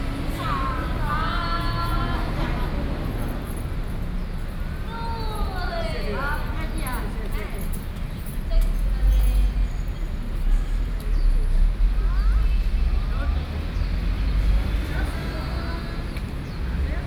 wugu, New Taipei City - Mother and child
板橋區 (Banqiao), 新北市 (New Taipei City), 中華民國